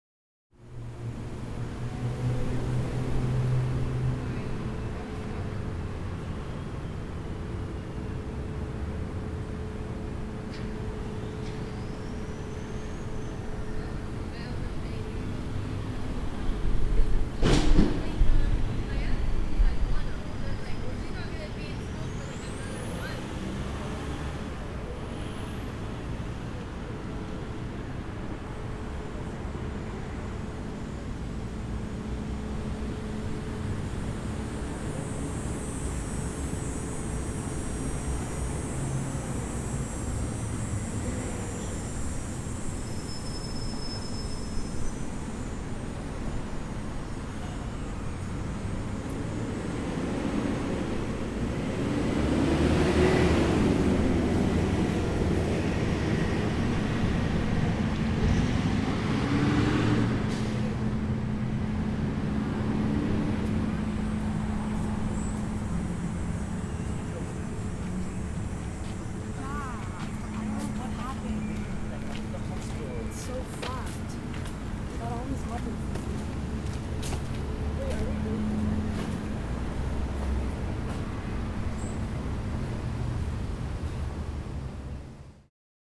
Av Marcil, Montréal, QC, Canada - Parc Notre-Dame
Recorded with a H4n in stereo, seated on a bench.
Nice weather.
Traffic sounds (buses, cars, ...)
Machinery.
School close by (children screams).
People passing by, talking.